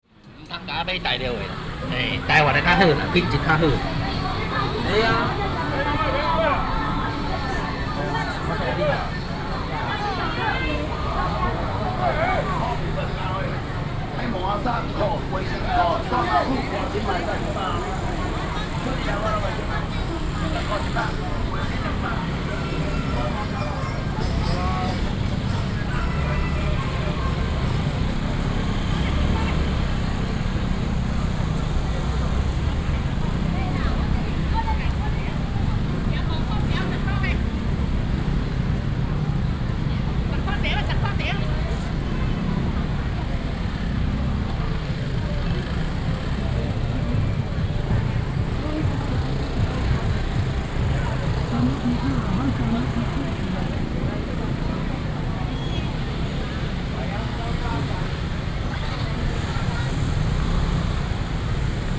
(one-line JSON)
{"title": "Datong St., Shalu Dist., Taichung City - Very noisy market", "date": "2017-01-19 09:58:00", "description": "Traditional markets, Very noisy market, Street vendors selling voice, A lot of motorcycle sounds", "latitude": "24.24", "longitude": "120.56", "altitude": "15", "timezone": "GMT+1"}